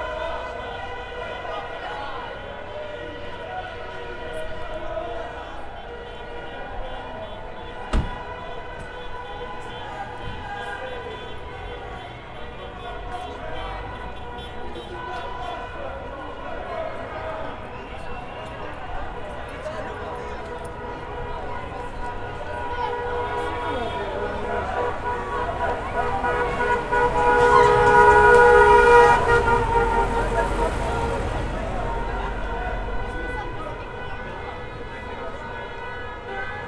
Lisbon, Marques Pombal, football final cup
Football_cup_final, Benfica, Lisbon, people, singing, yelling